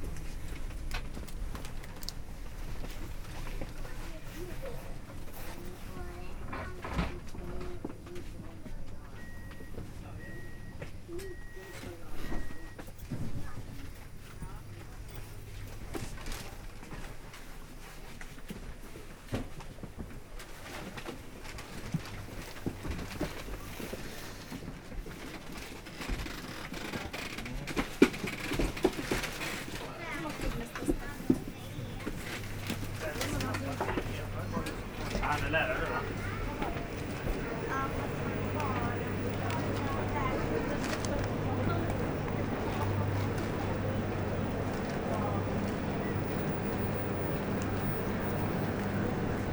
Öresundsbron, Sweden - Øresund train
Into the Øresund train, called Öresund in swedish. This is a train which begins from Copenhagen (Denmark) and goes to Malmö (Sweden). The train rides into a tunnel in Denmark and 'into' a bridge in Sweden. This recording is the end of the course, arriving in Malmö.
17 April 2019